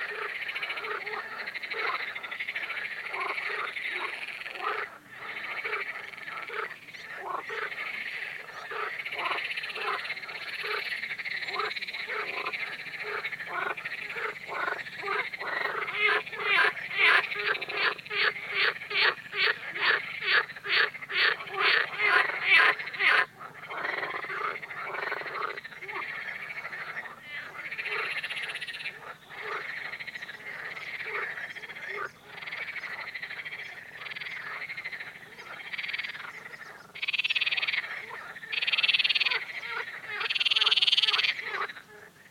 Birštonas, Lithuania, frogs
River Nemunas frogs. The bateries of my main recorder died, so, as always, back-up device is Sennheiser ambeo smart headset...